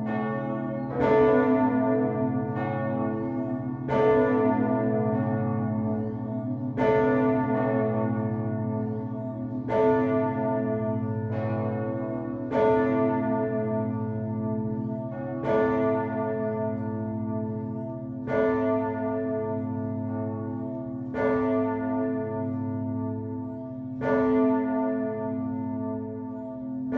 The Brugge bells in the Sint-Salvatorskathedraal. Recorded inside the tower with Tim Martens and Thierry Pauwels.